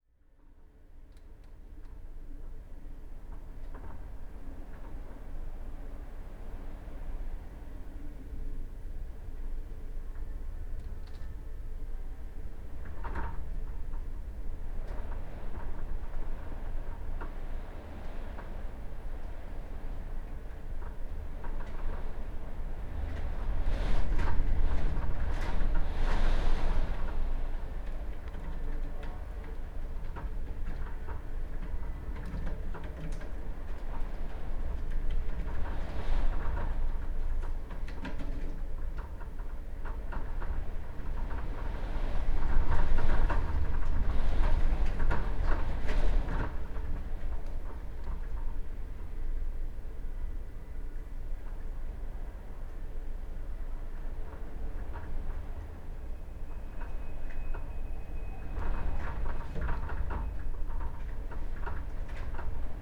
Taranto, Italy - Xmas Storm
Storm during St. Stephan's day. Recorded from inside my flat. Church bells playing really far.
Roland R26
XY+OMNI+Contact Mic (on the window)
26 December 2013, 17:30